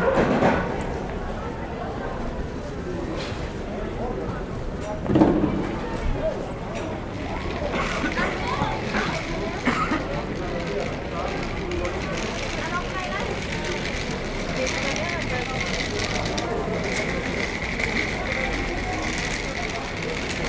place du marché, arceaux vélos, Aix-les-Bains, France - Jour de marché
Toute l'animation d'un jour de marché ZoomH4Npro posé sur la selle du vélo attaché à son arceau, un peu à l'écart des étalages.
Auvergne-Rhône-Alpes, France métropolitaine, France, 29 January 2022